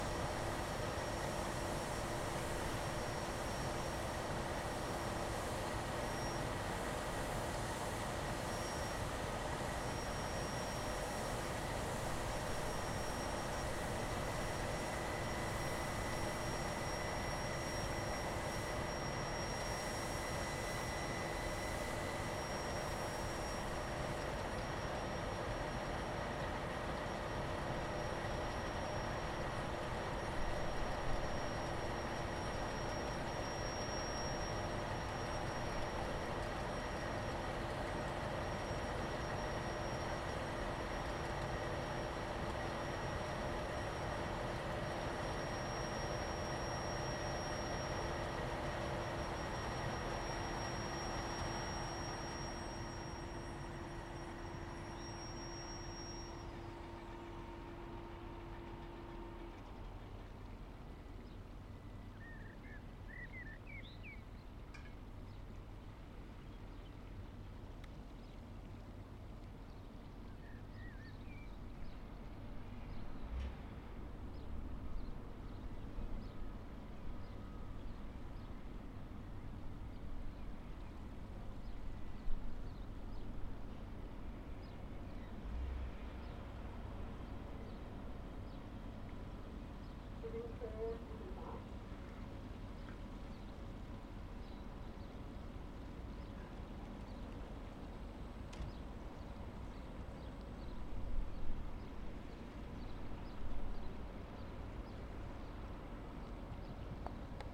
Unnamed Road, Ottmarsheim, Frankreich - Nach der Beladung mit Kies
Kurz nach der Beladung mit Kies. Rheinfrachter / Vogelstimmen
Ottmarsheim, France, 6 May 2019